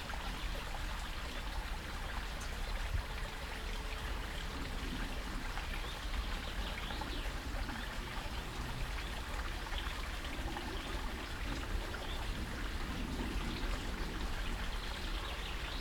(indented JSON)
{
  "title": "Buchet, Deutschland - Der Alfbach plätschert / The Alfbach ripples",
  "date": "2014-07-07 11:00:00",
  "description": "Unterhalb des Weges plätschert der Alfbach.\nBelow the path the Alfbach ripples.",
  "latitude": "50.25",
  "longitude": "6.32",
  "altitude": "491",
  "timezone": "Europe/Berlin"
}